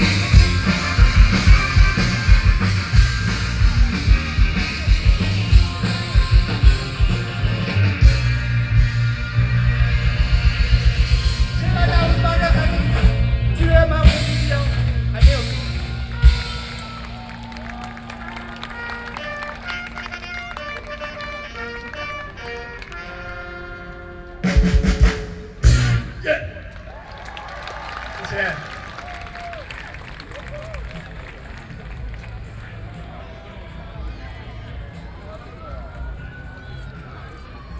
Taipei EXPO Park - Taiwan rock band Fair
Zhongshan District, Taipei City, Taiwan, 27 October 2012, 16:28